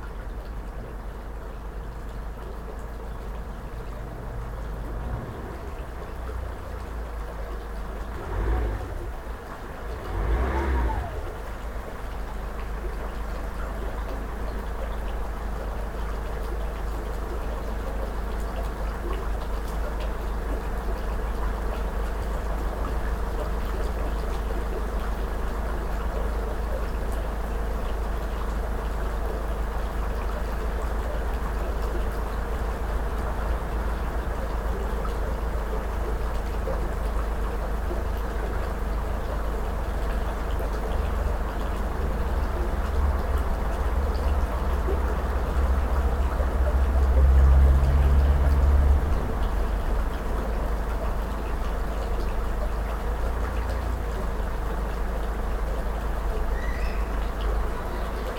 Ein Tag an meinem Fenster - 2020-03-25
Baden-Württemberg, Deutschland